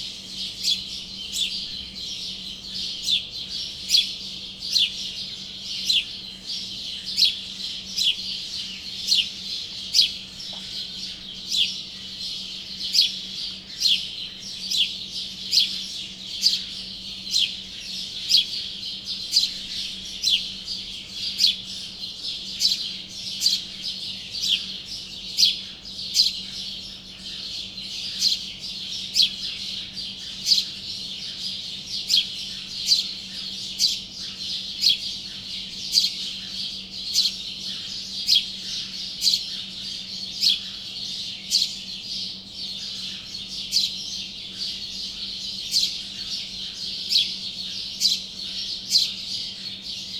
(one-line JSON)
{"title": "derb el horra, Fès, Morocco - Ryad Salama", "date": "2018-03-27 06:30:00", "description": "Oiseaux dans la cour du riad.", "latitude": "34.06", "longitude": "-4.98", "altitude": "353", "timezone": "Africa/Casablanca"}